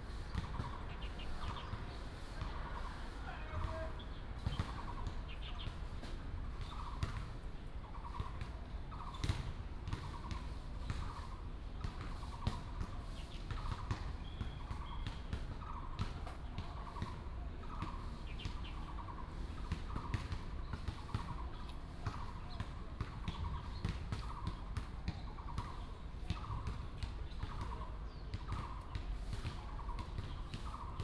中壢藝術園區, Zhongli Dist., Taoyuan City - in the Park
in the Park, Bird call, play basketball, Footsteps, traffic sound
2 August 2017, 15:19